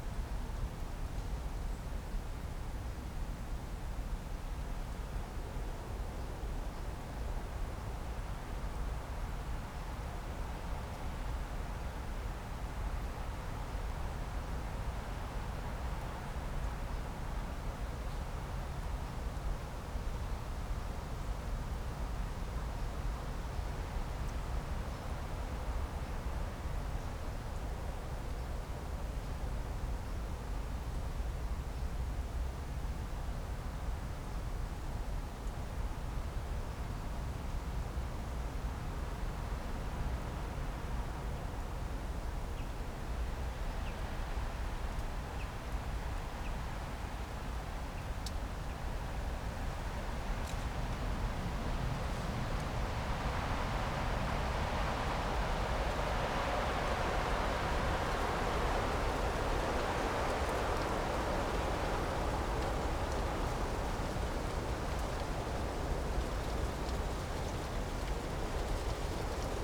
Cerje, Miren, Slovenia - Wind
Wind.
Recorded with Sound Devices MixPre3 II and LOM Uši Pro, AB Stereo Mic Technique, 40cm apart.